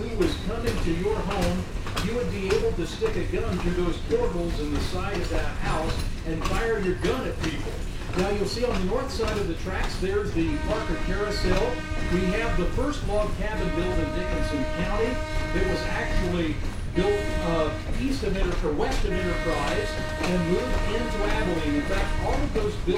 Grant Township, Dickinson County, near 2200 Avenue, Abilene, KS, USA - Abilene & Smoky Valley Railroad (Return Trip)
Heading west, returning to the depot in Abilene, after a trip to Enterprise. Riding on an excursion train: inside a 1902 wooden KATY (Missouri-Kansas-Texas Railroad) passenger car, pulled by a 1945 ALCO S-1 diesel engine (former Hutchinson & Northern RR). Host Steve Smethers provides local history. Right mic placed near open window. Stereo mics (Audiotalaia-Primo ECM 172), recorded via Olympus LS-10.